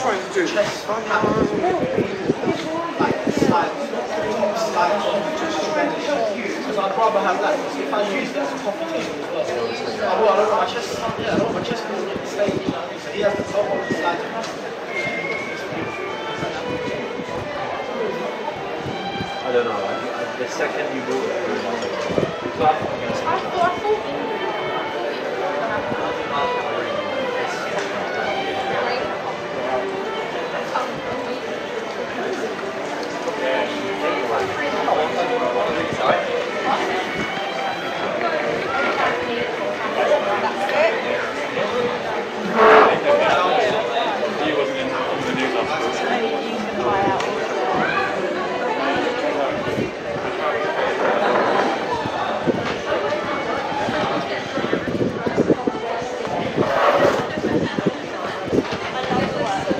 Frederick Gardens, Brighton, UK - Coffee